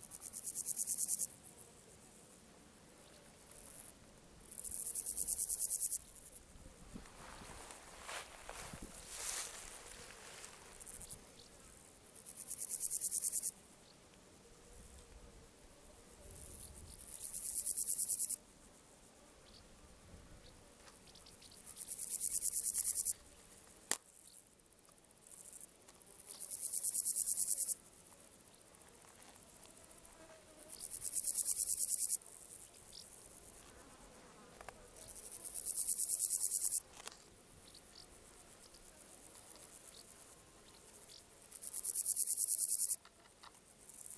Courpière, France - Summer field with flies
Close to the grass, flies and criquets